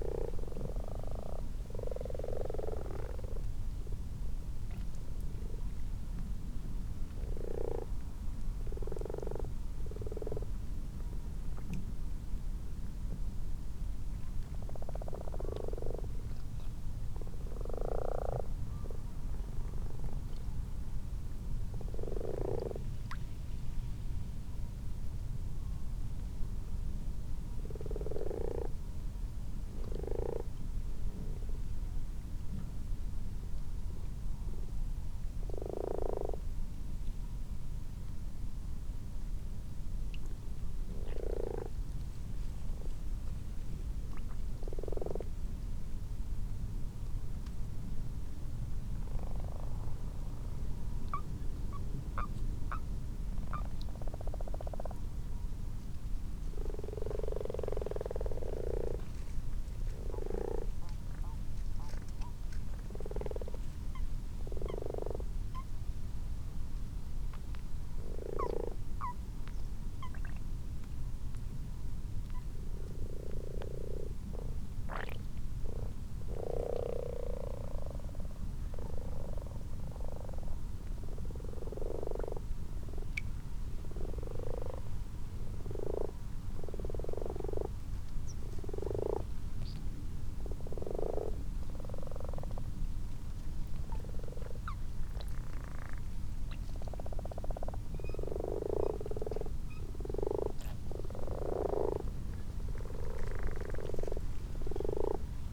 common frogs and common toads in a garden pond ... xlr sass on tripod to zoom h5 ... time edited extended unattended recording ...